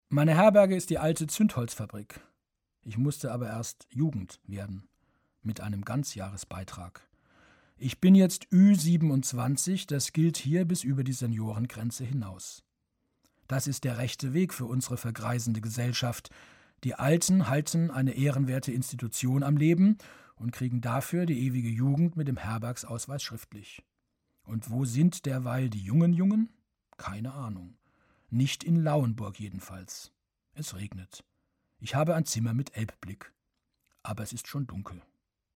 lauenburg (elbe) - zuendholzfabrik
Produktion: Deutschlandradio Kultur/Norddeutscher Rundfunk 2009
Lauenburg Elbe, Germany, 8 August 2009, ~22:00